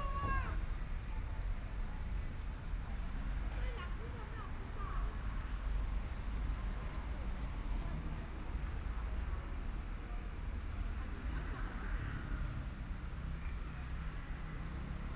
Walking on abandoned railroad tracks, Currently pedestrian trails, Dogs barking, Bicycle Sound, People walking, Binaural recordings, Zoom H4n+ Soundman OKM II ( SoundMap2014016 -23)
Taitung County, Taiwan, 16 January